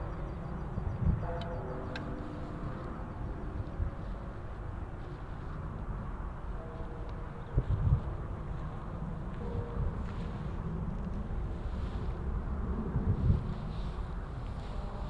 Tateiricho, Moriyama, Shiga Prefecture, Japan - New Year 2017 Temple Bells and Fireworks
New Year's Eve temple bells, car traffic, and a few trains. At midnight fireworks announce the beginning of 2017, and a jet aircraft passes overhead. Recorded with an Audio-Technica BP4025 stereo microphone and a Tascam DR-70D recorder, both mounted on a tripod.